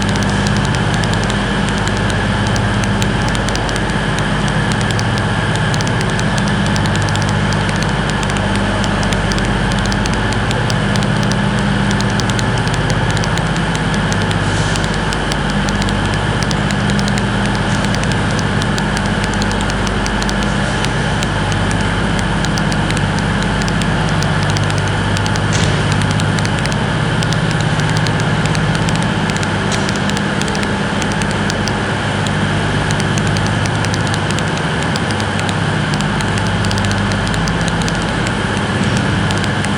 Glasgow, UK - Interference Between Platforms 5-6
Recorded with an Audio-Technica AT825b (stereo x/y) into a Sound Devices 633.
21 April 2018, 05:55